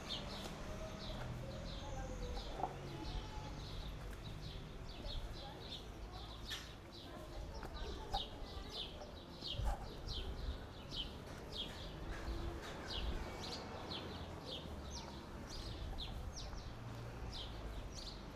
Mea Shearim, Jerusalem, Israel - Rekach alley, mea shearim
soundwalk through the alley - contains a snippet of conversation in yiddish and children playing at a pretend wedding.